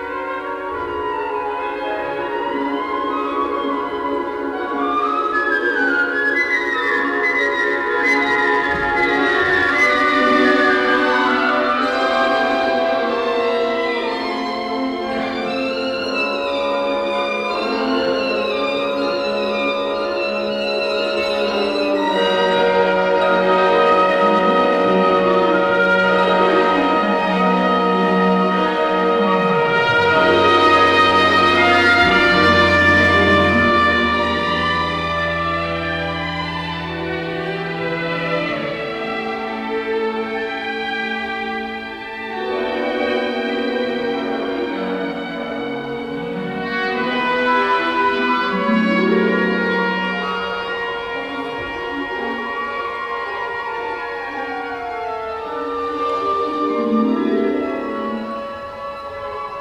Südviertel, Essen, Deutschland - essen, philharmonie, alfred krupp concert hall, orchestra rehearsal
Im Alfred Krupp Saal der Philharmonie Essen. Der Klang einer Probe des Sinfonieorchesters Teil 1.
Inside the Alfred Krupp concert hall. The sound of a rehearsal of the symphonic orchestra.
Projekt - Stadtklang//: Hörorte - topographic field recordings and social ambiences